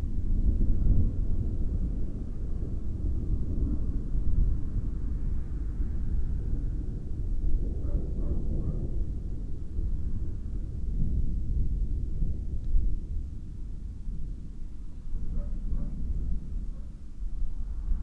Chapel Fields, Helperthorpe, Malton, UK - moving away thunderstorm ...
moving away thunderstorm ... xlr SASS on tripod to Zoom F6 ... dogs ... ducks ... voices in the background ...
26 June 2020